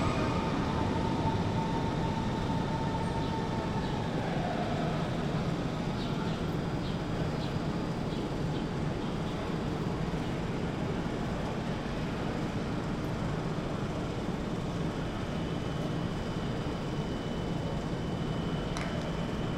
Berlin Ostbahnhof, platform - Berlin Ostbahnhof, survey point, platform 6
sunday, 20.07.2008, 2:30pm
station ambience, microfon on a survey point right on the platform.